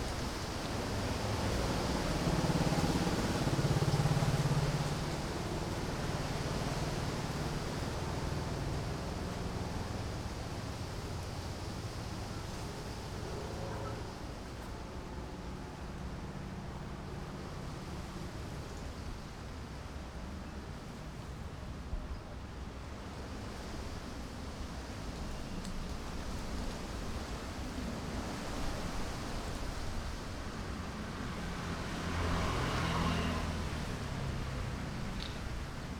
篤行十村, Magong City - In large trees
In large trees, Wind, Birds singing, Traffic Sound
Zoom H6 Rode NT4
Penghu County, Taiwan, 23 October 2014